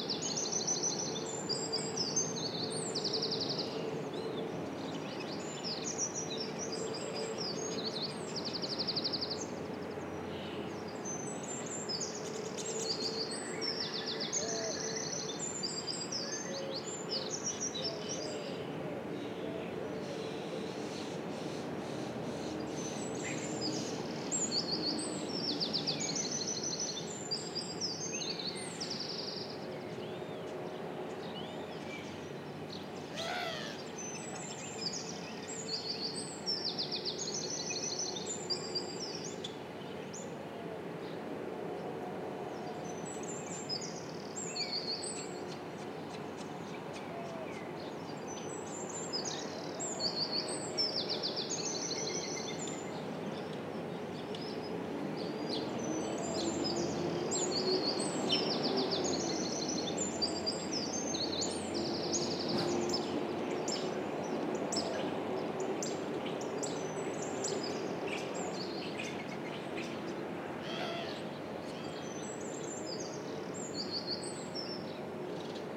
Birds and ambience near the harbour of Port Ellen in the morning. You can hear some sounds coming from the cars approaching the harbour.
Recorded with Sound Devices MixPre-6 mkII and a pair of LOM Uši Pro microphones.
Alba / Scotland, United Kingdom, 7 May 2022